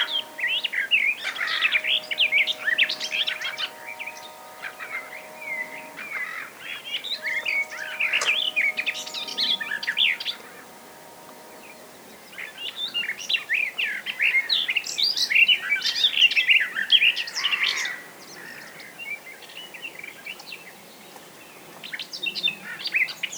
tondatei.de: rheindelta, fussach, vogelreservat
vogelgezwitscher, vogelgesang, schiff
Österreich, European Union